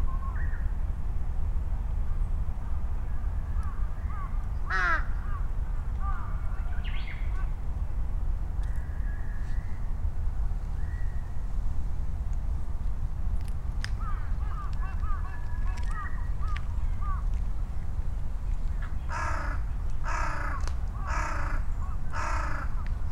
Noisy crows calling and responding, quiet Japanese bush warblers and other birds, children playing and other human sounds heard over the rumble of vehicles and aircraft on a Sunday at noon in Ichimiyake, Yasu City, Shiga Prefecture, Japan. Recorded on a Sony PCM-M10 with small omnidirectional mics attached to a bicycle handlebar bag. See details are and photos at Shiga Rivers.
Shiga-ken, Japan, March 2018